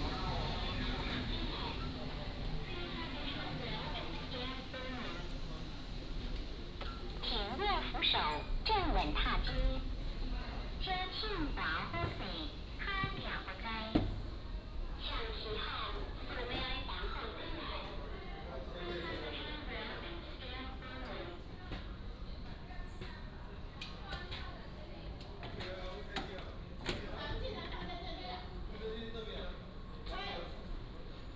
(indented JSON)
{
  "title": "Kinmen Airport, Taiwan - Walking in the airport",
  "date": "2014-11-02 14:45:00",
  "description": "From the airport departure lounge, Towards the airport and into the cabin",
  "latitude": "24.44",
  "longitude": "118.37",
  "altitude": "16",
  "timezone": "Asia/Taipei"
}